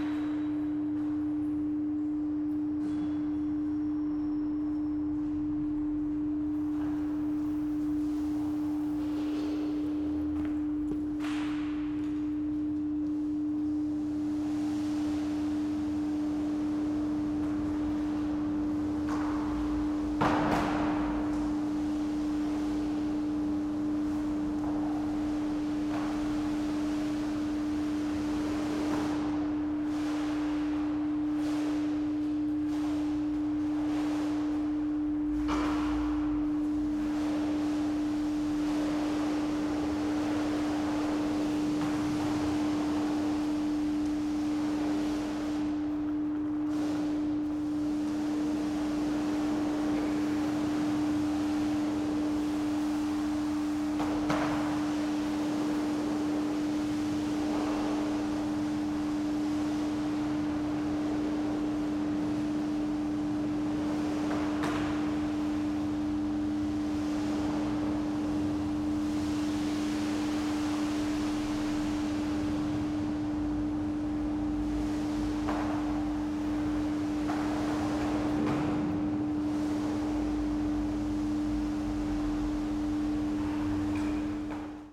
Berlin, Schönleinstr. - station cleaning
subway u8 schönleinstr., workers cleaning the station at night.